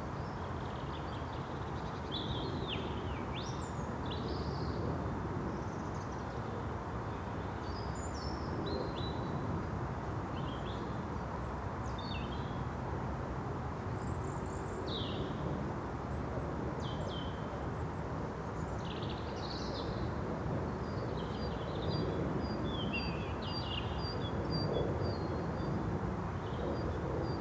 Leigh Woods National Nature Reserve, Bristol, UK - Birdcalls after the rain
This recording was taken on a raised embankment that used to be part of an iron age hill fort, so I was almost level with the tree canopy.
As well as the birds you can hear the drone of traffic on the road in the nearby gorge, and at around 2 mins some people jog past on the path to the left.
(rec. zoom H4n)
Bristol, North Somerset, UK, 13 February 2015, ~17:00